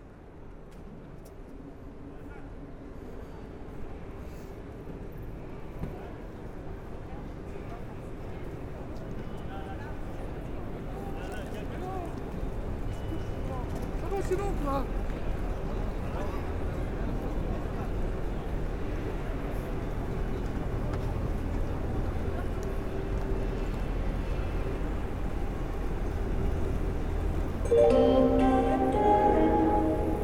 Gare de Lyon, Paris, France - Gare de Lyon station
Taking the train in the Paris station 'Gare de Lyon'.